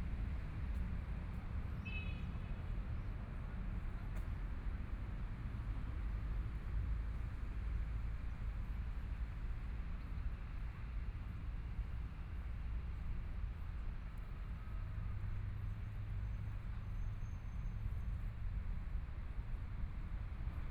慈濟醫院花蓮院區, Taiwan - walking
walking, Aircraft flying through, Environmental sounds, birds sound
Binaural recordings
Zoom H4n+ Soundman OKM II + Rode NT4